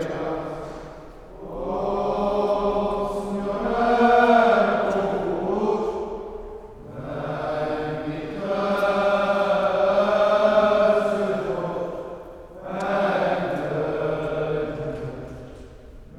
Matriz - Ponta Delgada - Romeiros
The Romeiros (Pilgrims) is a traditional spiritual ritual during lent in the island of São Miguel, Azores. This group arrived at the church Matriz around 1 pm when I was drinking my coffee in a café nearby. I've immediately grabbed my pocket size Tascam DR-05 and started recording them while they were singing at the church door. Then I followed them inside the where they prayed for a few minutes before departing for a long walk to some other part of the island.
Ponta Delgada, Portugal, 13 April, ~11:00